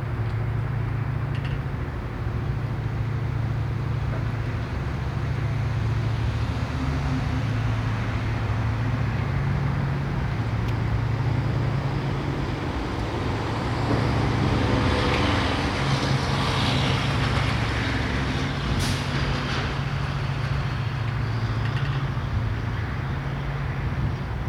Snug Harbor
Jetty. waves, distant industry, traffic, passing ship
NY, USA, 13 January 2012